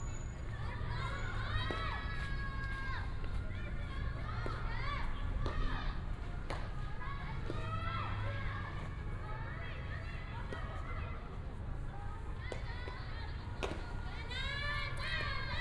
青年公園, Wanhua Dist., Taipei City - in the Baseball field
in the Park, Primary school students are practicing softball, traffic sound